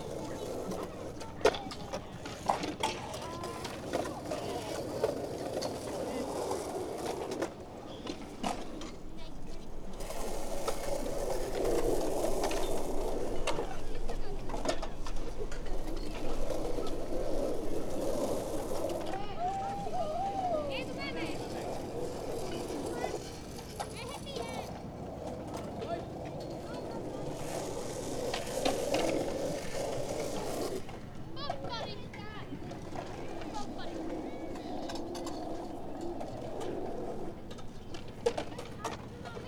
Hollihaka skatepark, Oulu, Finland - Kids skateboarding at the Hollihaka skatepark
Large amount of kids skating at a skatepark in Oulu on the first proper, warm summer weekend of 2020. Zoom H5 with default X/Y module.